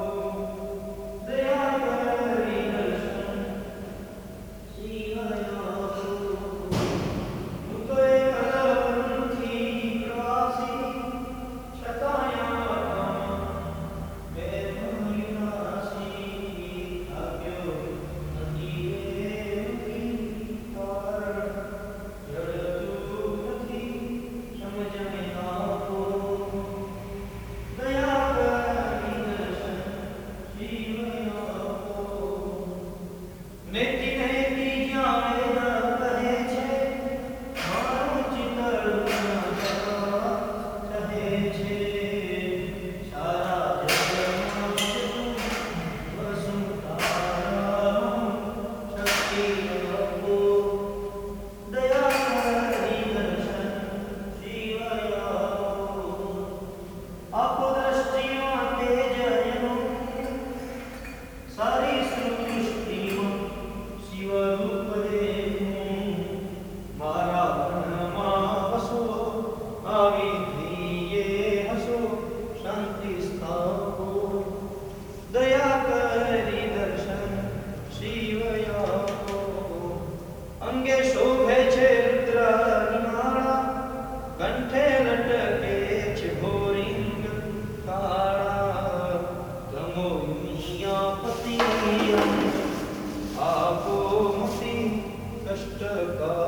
Shiva Temple, Nakasero Hill, Kampala, Uganda - Morning offerings...
The early morning hum of Kampala resonates in the dome of the temple, the city market is buzzing in the streets all around, but here inside, the priest is following his routine of morning offerings and prayers… people are dropping in on the way to work, or to the market, walk around from altar to altar, praying, bringing food offerings, ringing a bell at each altar…